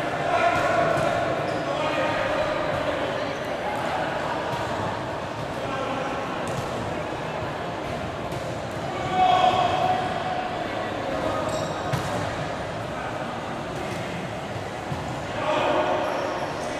Ciudad universitaria, universidad de antoquia, Medellín, Aranjuez, Medellín, Antioquia, Colombia - Coliseo UdeA